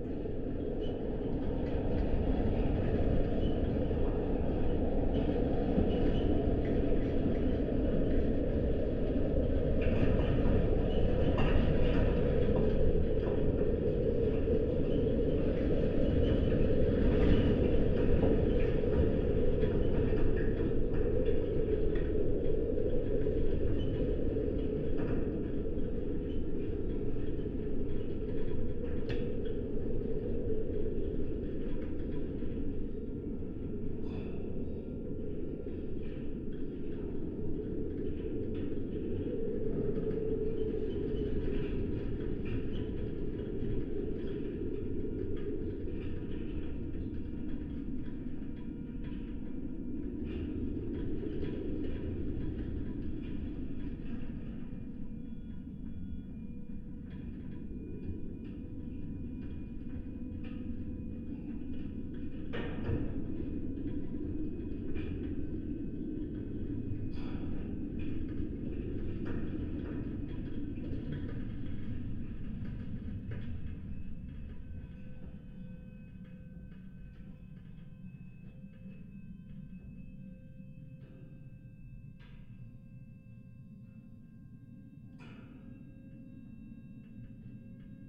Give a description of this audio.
contact microphones on a fence